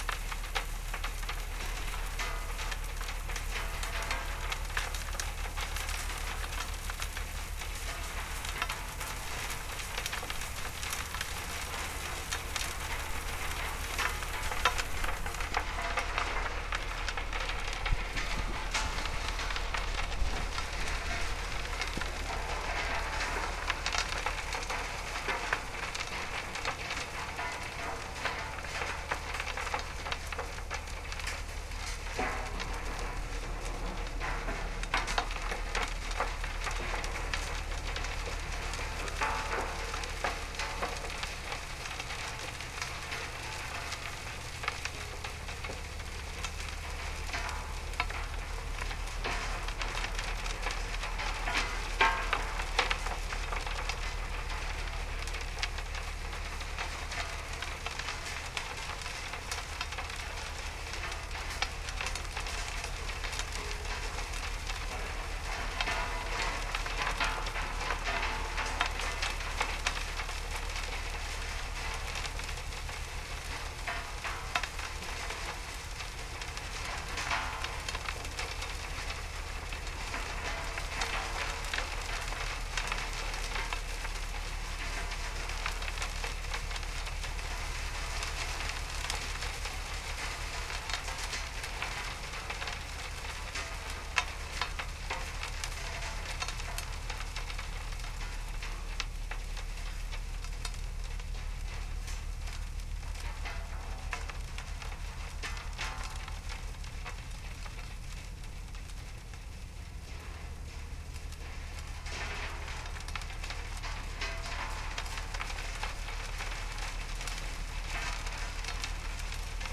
An hopper dredger cleans the Seine bed every day. One of this boat, called the Jean Ango, is berthed here like on the left on the aerial view. This hopper dredger is pushing the collected stones in an abandoned quarry, using two big metal tubes. Pushing the stones makes enormous noises and a very staggering nuisance for the neighbours. The recording contains in first the end of a cuve and at the middle of the time, a new tank. It was hard to stay here as the sound level was high. The boat volume is 5000 m³. It makes this nuisance during a very long time and also by night.